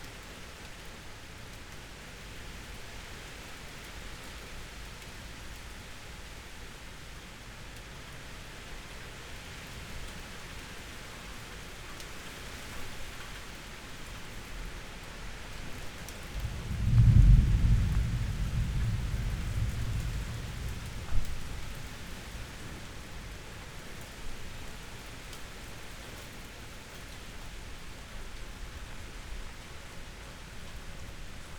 a tunderstorm was expected, but didn't arrive, just a bit of wind, and a few drops.
(Sony PCM D50, Primo EM172)
Berlin, Germany, 30 May